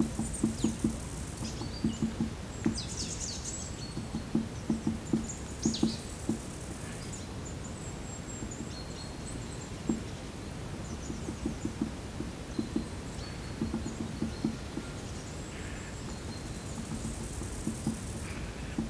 Wigierski Park Narodowy, Piertanie, Krasnopol, Polen - Piertanie, Wigierski Park Narodowy, Suwałki - woodpecker near a clearing
Piertanie, Wigierski Park Narodowy, Suwałki - woodpecker near a clearing. Forest ambience, birds and crickets. [I used Olympus LS-11 for recording]